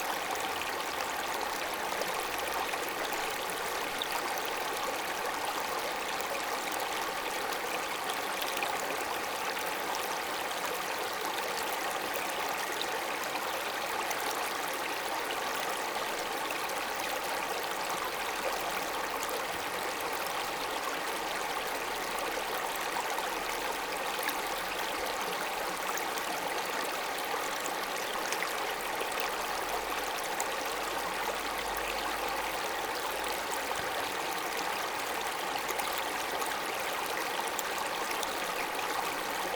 Saint-Marc-sur-Seine, France - Seine river
Seine river was a stream, now it's a proud small river, cheerful in the pasture. We followed all the Seine river (777,6 km), we stoped to walk here and began to swim exactly in this place, this small village of the endearing Burgundy area.
30 July 2017